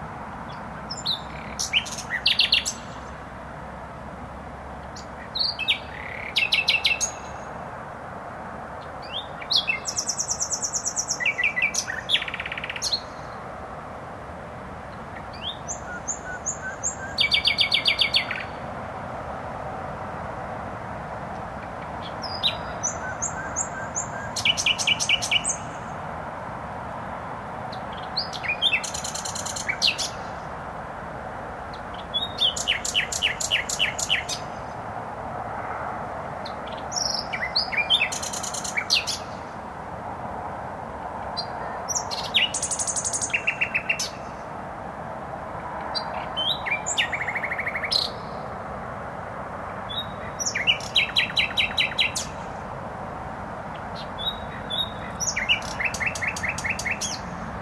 Recording nightingale singing in bushes close to noisy motorway. Recorder: Olympus LS-11